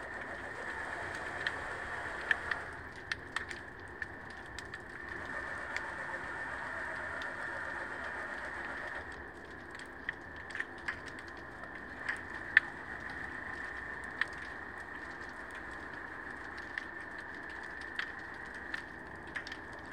Puerto de Mogán, Gran Canaria, underwater recording
arriving of the tourist boat captured underwater